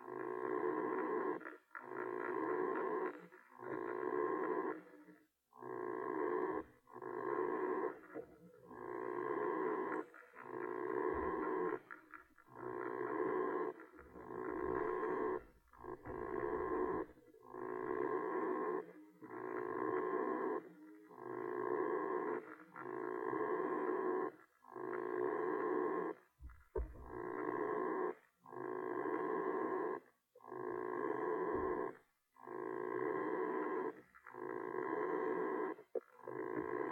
Utena, Lithuania, underwater creature
some underwater creature recorded with hydrophone
10 August 2013